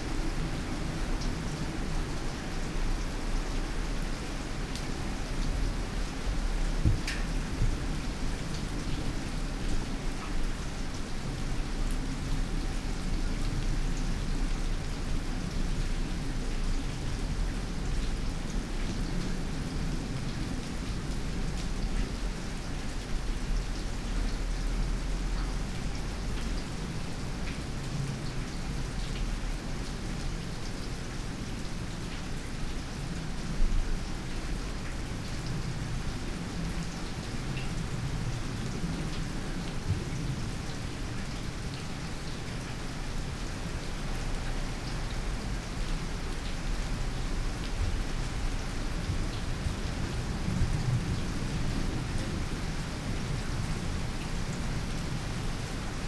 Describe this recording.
gardens sonority, kyoto rains, old wood, honey spirits, feet already cold, ears longing for rain curtains